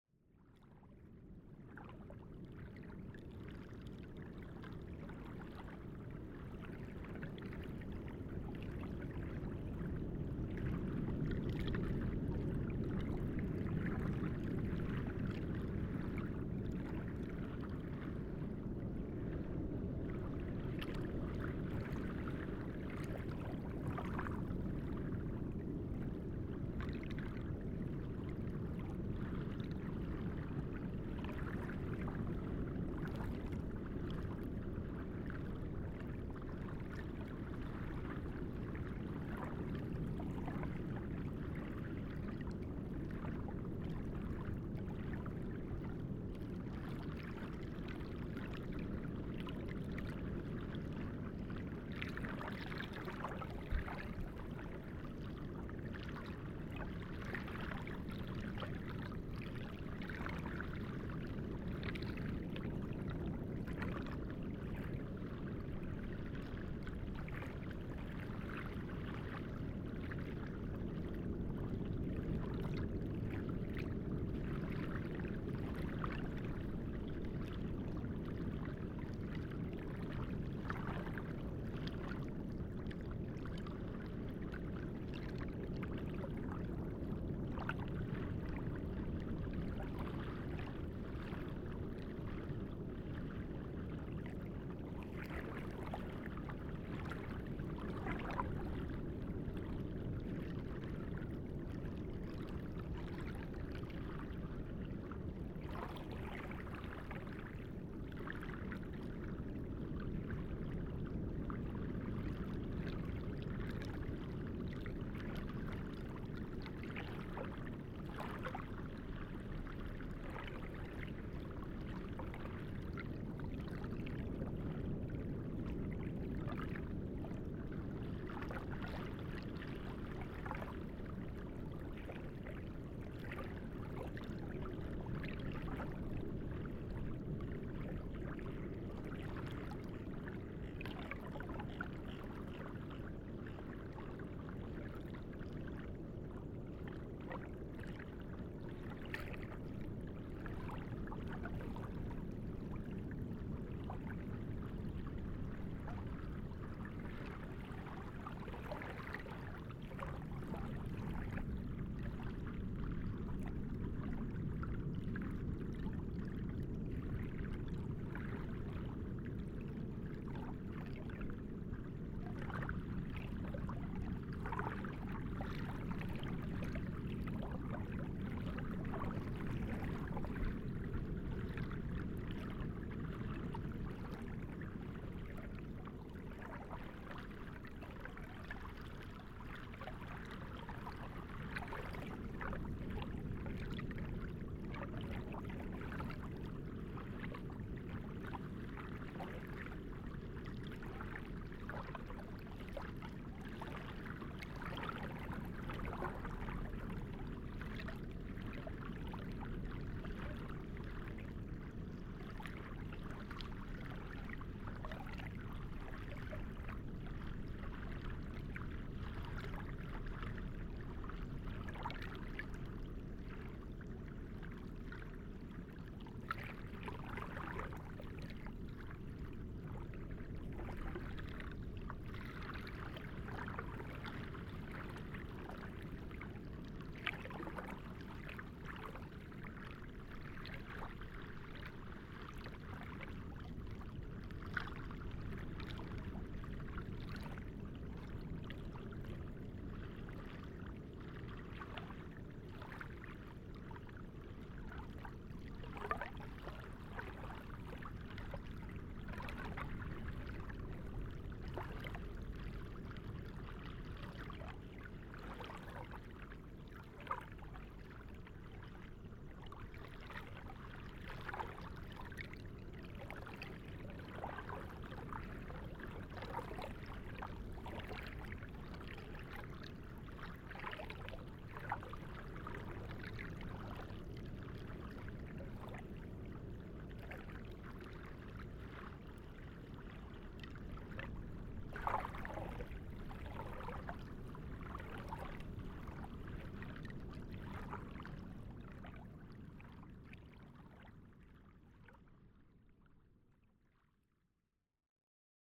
Soft waves on the beach at night at Chez Nono. High tide. In the distance the sound of the waves of the open pacific breaking on the outer reef. in the beginning and in the middle of the recording the grating calls of Brown Noddys can be heard, a species of tern.Recorded with a Sound Devices 702 field recorder and a modified Crown - SASS setup incorporating two Sennheiser mkh 20 microphones. Dummy head microphone facing seaward.